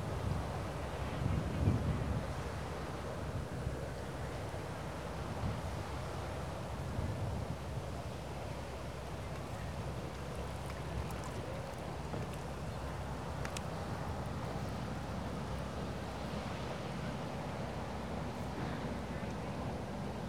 Berlin, at Landwehr Canal - makeshift boat / swans
noon ambience at the canal, a makeshift boat drifting - running its engine occasionally to change position, tourist boat passes by, swans taking off flapping their feet in the water
Berlin, Germany, 31 August 2013